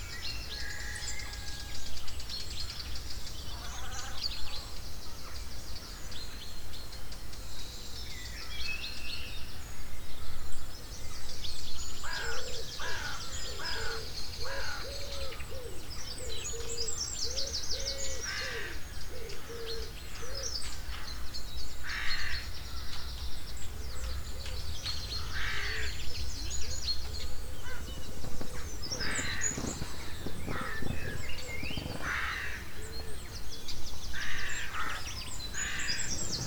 Early morning. 100 yards from the copse, facing west. Lots of birds, and lambs / poultry a long way off at Graston farm. My back was to the tent and you can hear my boy shifting on his air bed every now and then.
Recorded on a Tascam DR-40 with the built in mics set to wide.
5 June, 07:24